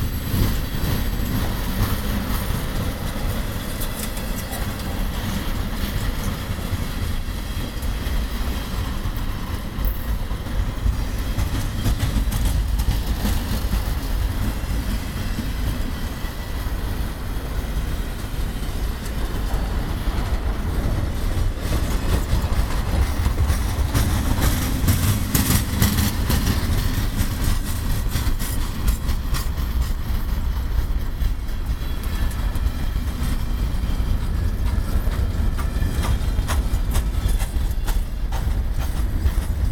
Montreal: Train Tracks in St. Henri - Train Tracks in St. Henri
equipment used: iPod DIY custom Binaural Headphone mounted mics DIY mic amplifiers and Belkin iPod interface
I wanted to capture the train sounds in St. Henri a historic rail hub of Quebec.It is a recoring of a complete train passing, with many different types of car going by so it makes it quite dynamic. Sadly you can hear my camera going off early in the recording, but i thought it was ok anyway...